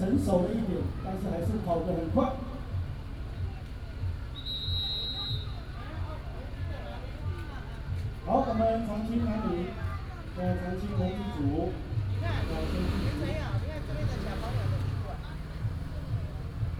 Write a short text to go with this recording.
School and community residents sports competition